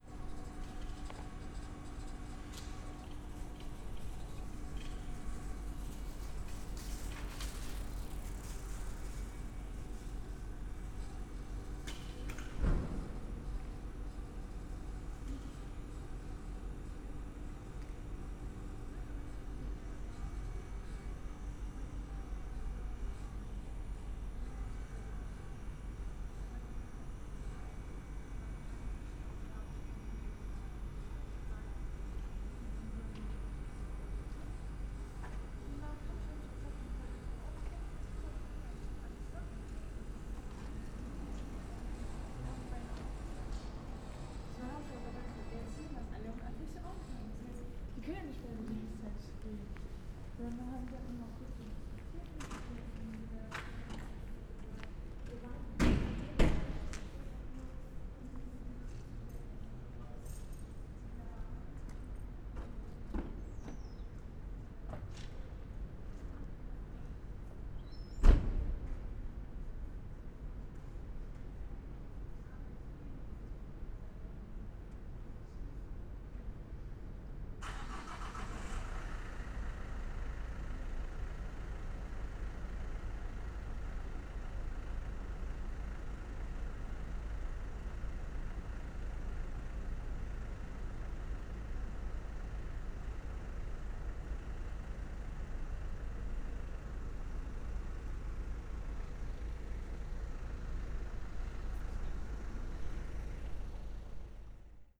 Breite Str., Köln - midnight ambience /w rattling exhaust
Cologne city, midnight ambience, exhaust / ventilation is rattling, someone puts up posters, a car is starting
(Sony PCM D50, Primo EM172)